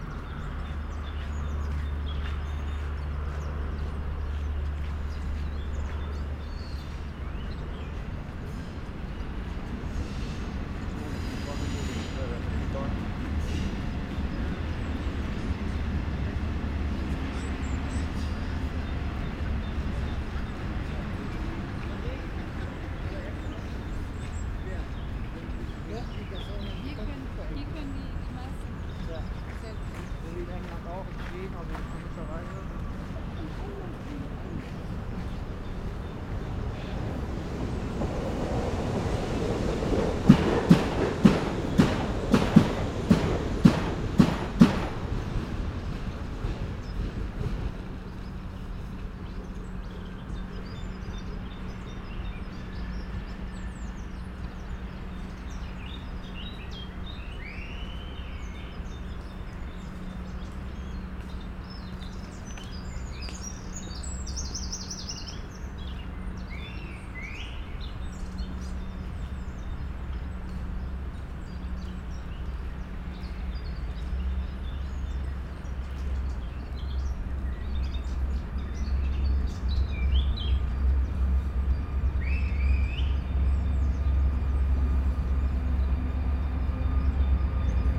Sunny ambiance into the park, and a fast pace of trains passing in the station of Østerport.